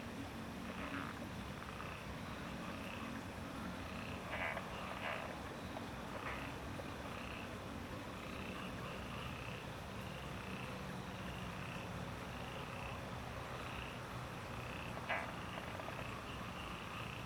TaoMi Line, 埔里鎮桃米里, Nantou County - Frogs chirping
The sound of water, Frogs chirping
Zoom H2n MS+XY
Puli Township, 桃米巷52-12號, March 2016